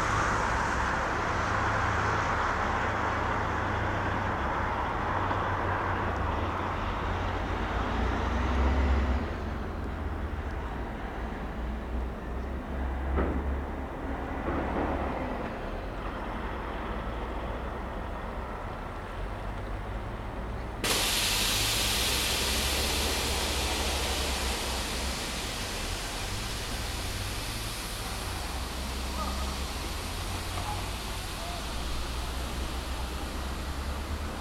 herne-unser fritz - am westhafen